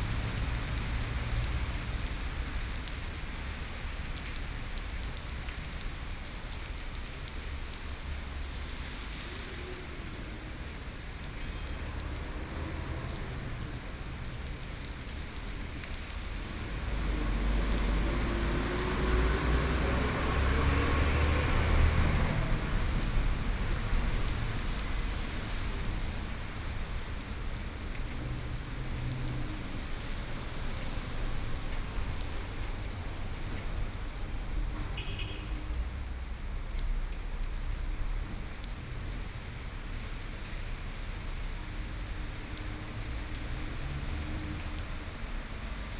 Toucheng Township, Yilan County - Rainy Day
Rainy Day, Sitting in the square in front of the temple, The traffic soundst, Binaural recordings, Zoom H4n+ Soundman OKM II
Toucheng Township, Yilan County, Taiwan, 7 November, ~14:00